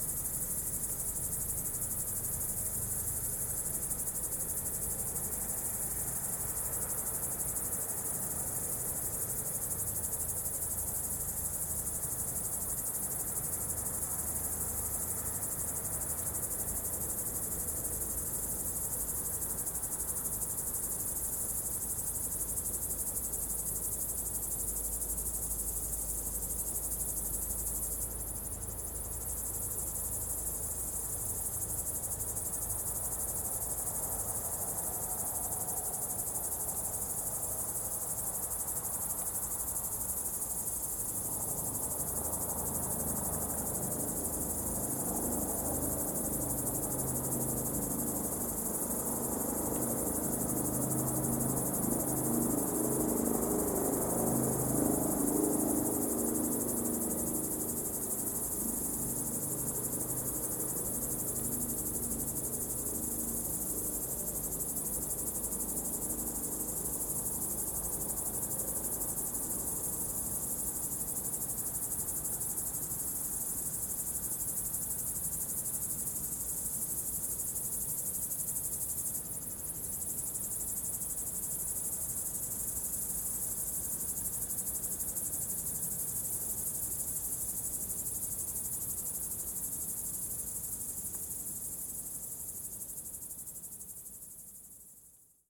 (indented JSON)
{
  "title": "Solesmeser Str., Bad Berka, Deutschland - Flight Over Biospace *Binaural",
  "date": "2021-08-05 20:48:00",
  "description": "*Binaural - best listening with headphones.\nThis recording chronicles sounds of nature typical of summer nights juxtaposed against anthrophony. Sounds in the left and right channels exhibit acoustic energies and rhythmical textures.\nIn the sound: Crickets, soft winds, car engine, wings and voice of an unknown bird.\nGear: Soundman OKM with XLR and Adapter, ZOOM F4 Field Recorder.",
  "latitude": "50.90",
  "longitude": "11.29",
  "altitude": "289",
  "timezone": "Europe/Berlin"
}